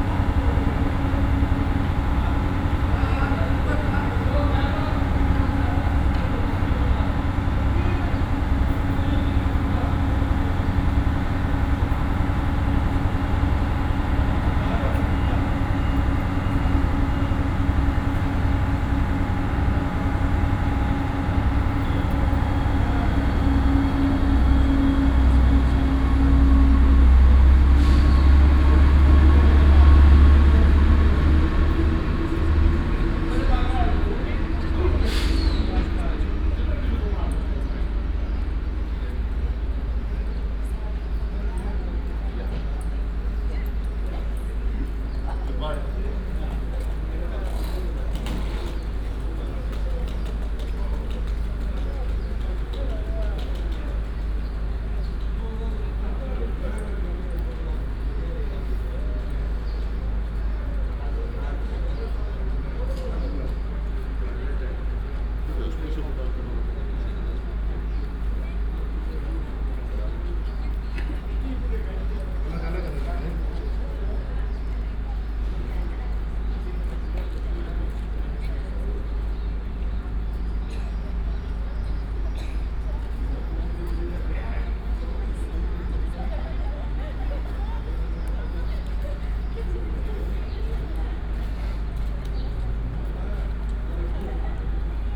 a short walk in Athens central station. This station has about the size of a local suburban train station, somehow odd for such a big city.
(Sony PCM D50, OKM2)
Athina, Greece, 6 April 2016